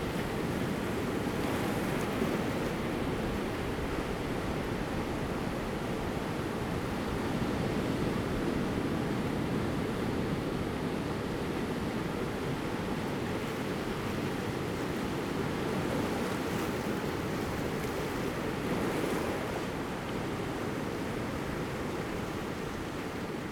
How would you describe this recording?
At the seaside, Sound of the waves, Fighter flying through, Very hot weather, Zoom H2n MS+ XY